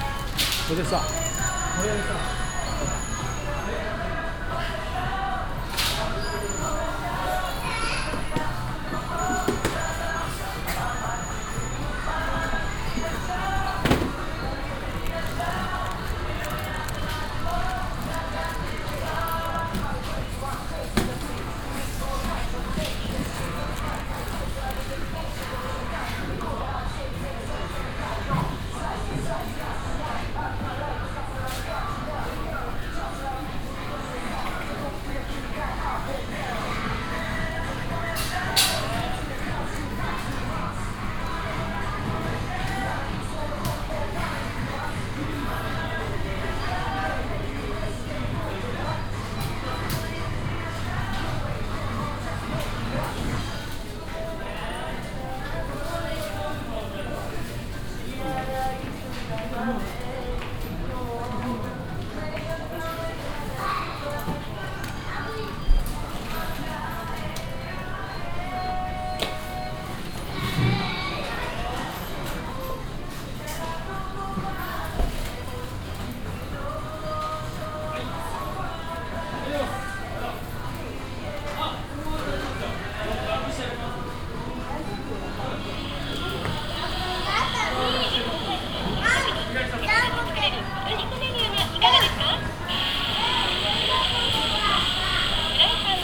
takasaki, fish supermarket
a supermarket specialised on seafood - a salesman offering several kinds of fresh fish and seaweed
international city scapes and social ambiences